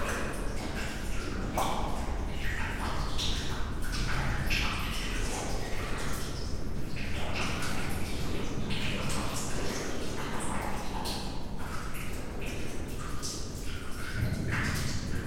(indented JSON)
{
  "title": "Esch-sur-Alzette, Luxembourg - Deep mine",
  "date": "2017-04-15 14:00:00",
  "description": "Sounds of friends walking and after, general overview of the tunnel sounds. We are in the green layer, the most deep place of the mine. Probably nobody went in this place since 80 years, as it's far and difficult to access.",
  "latitude": "49.47",
  "longitude": "5.98",
  "altitude": "374",
  "timezone": "Europe/Luxembourg"
}